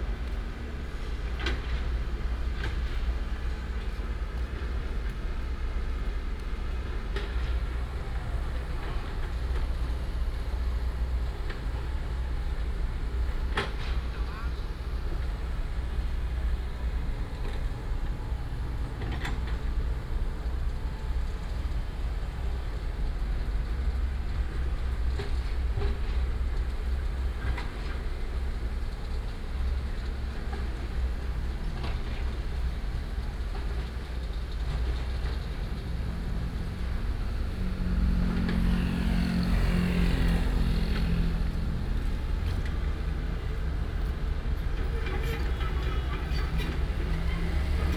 立功社區, East Dist., Hsinchu City - Site construction sound
Site construction sound, traffic sound, Next to the old community, Binaural recordings, Sony PCM D100+ Soundman OKM II
East District, Hsinchu City, Taiwan, 27 September, 15:42